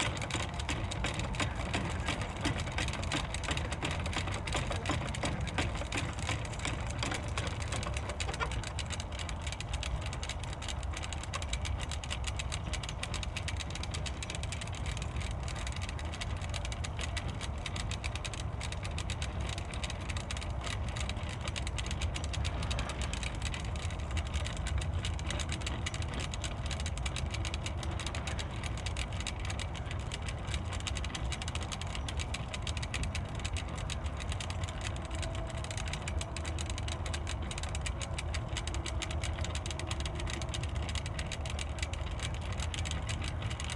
Tempelhofer Feld, Berlin, Deutschland - wind wheel, Almende Kontor
wind wheel build of old bike parts, urban gardening area
(SD702, AT BP4025)